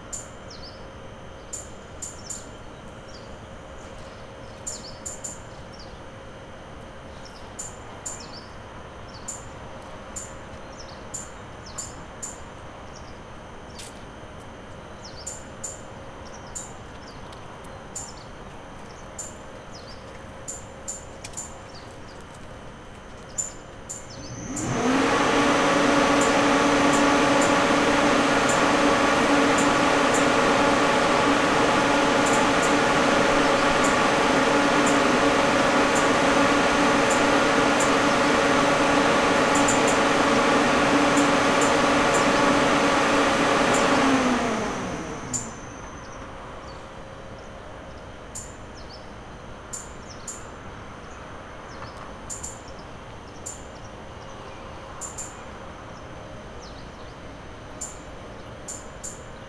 M. K. Čiurlionio g., Kaunas, Lithuania - Train station - near a train getting ready to leave
Long recording of a train station atmosphere. The train is getting ready to leave, periodically producing various sounds. A few people go by, entering or exiting the train. At the end, an old man takes a phone call near the recorder. Recorded with ZOOM H5.